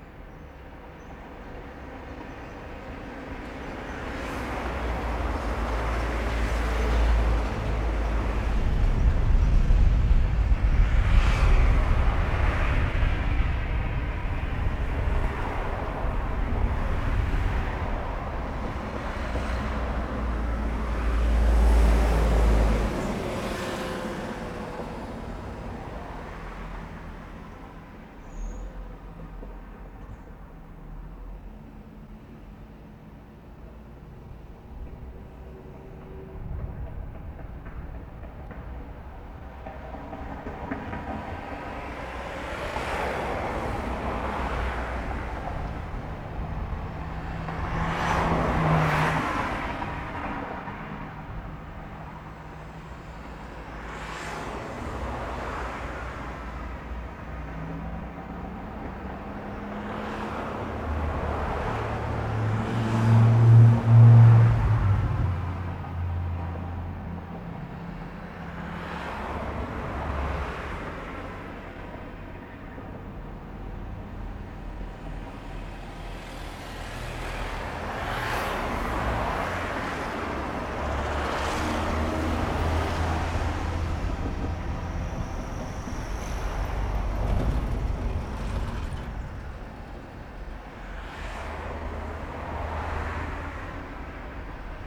Strada Nicolae Milescu Spătaru, Chișinău, Moldova - The unfinished bridge at Ciocana
The recording was done on the top of a unfinished bridge at the end of the city Chisinau. On that bridge often young folks come and hung around. In the recording are sounds of all sorts of cars, people talking here and there and some crickets a little later on. The recording was done with Zoom H6 (SSH-6).